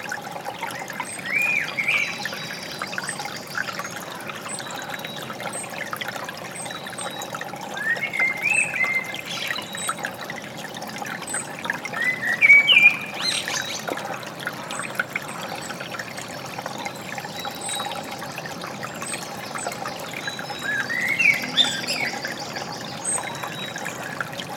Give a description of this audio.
Sound of small stream with woodland birds. Sony PCM-D50